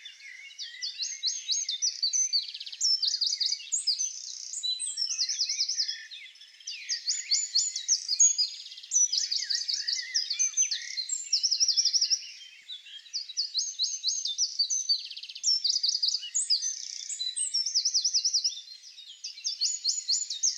Hucqueliers (Pas-de-Calais)
Ambiance printanière du matin
France métropolitaine, France, 26 May 2019, 05:30